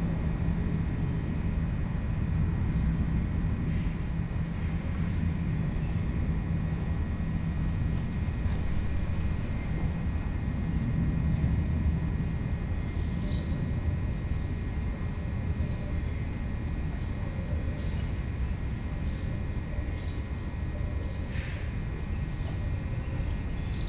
Beyoğlu/Istanbul Province, Turkey - Birds Early morning
Birds and electrical transformer. 2x DPA omni mics, Dat recorder
Marmara Bölgesi, Türkiye, 7 June 2007, 06:30